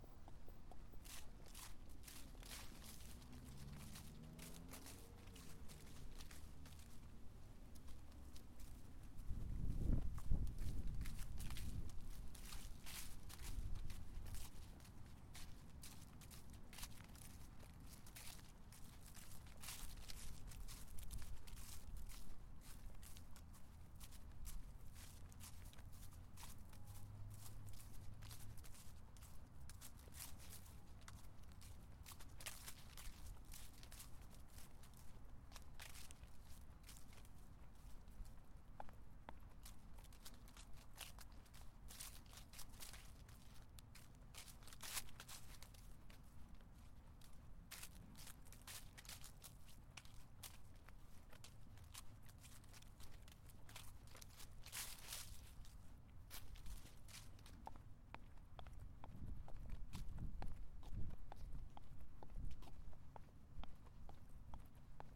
Appleton, WI, USA - Walk Between Con and Hiett
: The sound of crunchy leaves walking from the Con to Hiett, cutting along Main Hall Green.